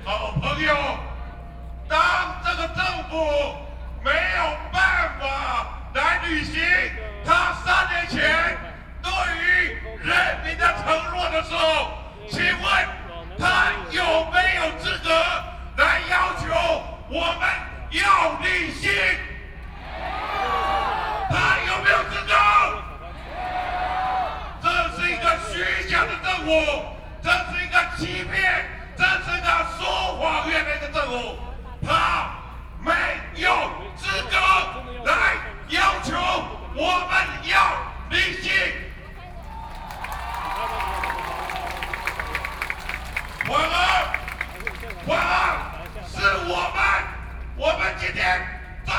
Ketagalan Boulevard, Zhongzheng District, Taipei City - speech
Excitement and enthusiasm speech, Against the Government, Sony PCM D50 + Soundman OKM II
Taipei City, Taiwan, August 2013